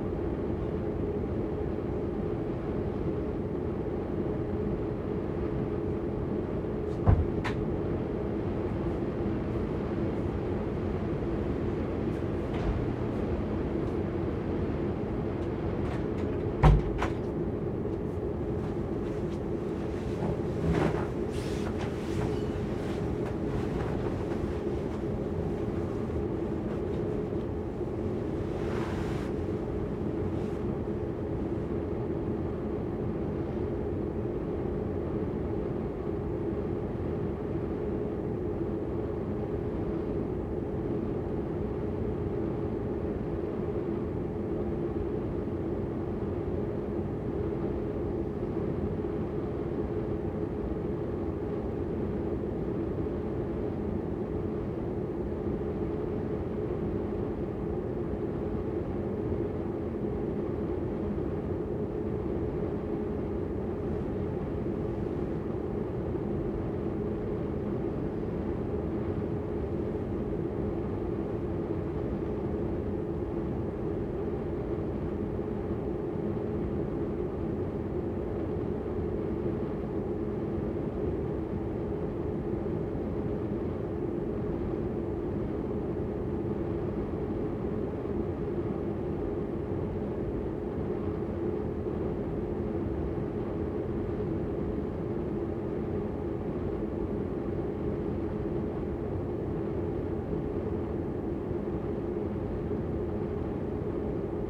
{"title": "West End, Halifax, NS, Canada - Bedroom heating vent 6.15am", "date": "2015-10-21 06:15:00", "description": "The central heating in Nova Scotian houses is not by radiators but by warm air blown through vents in each room. It's a completely different sounding system to get used to late at night and in the early morning. The contrast between 'on' and 'off' is the contrast between awake and sleep.", "latitude": "44.64", "longitude": "-63.60", "altitude": "56", "timezone": "America/Halifax"}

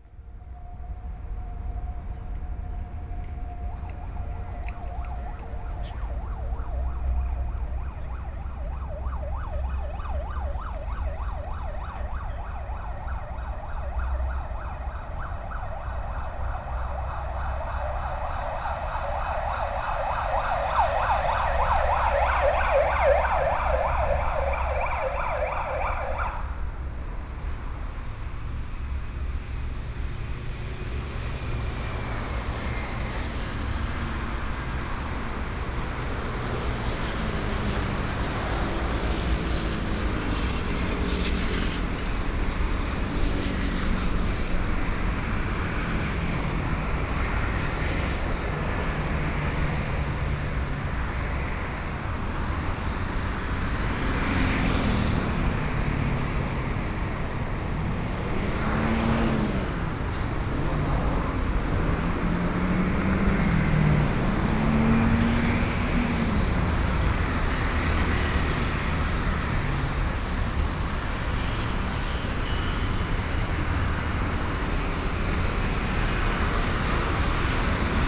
{"title": "Sanduo 1st Rd., Lingya Dist. - At the intersection", "date": "2014-05-15 16:50:00", "description": "At the intersection, Traffic Sound", "latitude": "22.62", "longitude": "120.34", "altitude": "15", "timezone": "Asia/Taipei"}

